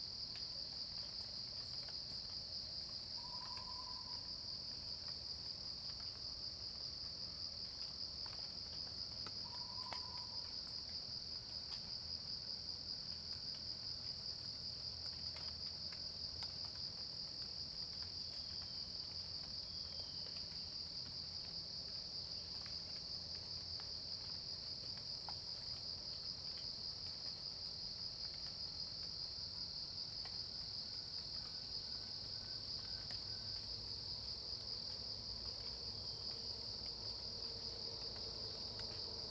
Shuishang Ln., Puli Township 桃米里 - Insects called
Rain sound, Insects called
Zoom H2n MS+XY
Puli Township, 水上巷28號, 19 September 2016, 05:44